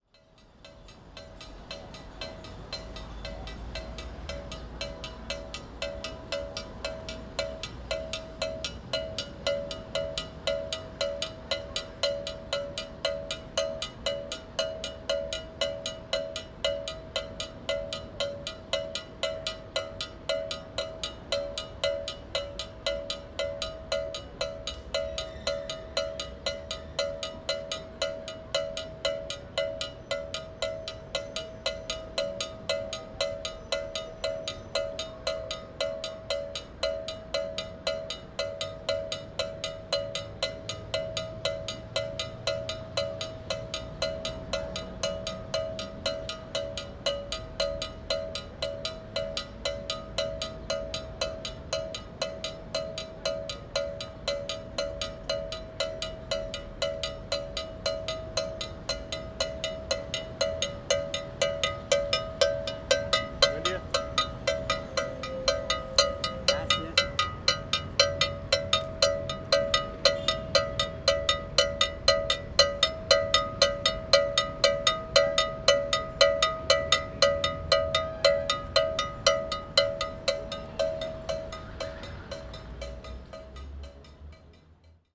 Parque Centrale, Havana, Cuba - Campana busker
Minimalist busker playing campana (cowbell).